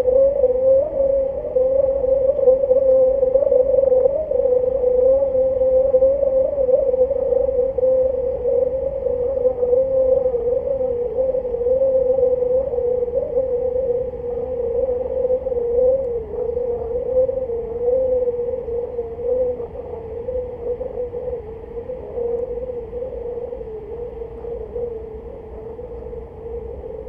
Waterharp installation Kaunas, Lithuania
Recorded during the 'Environmental Sound Installation' workshop in Kaunas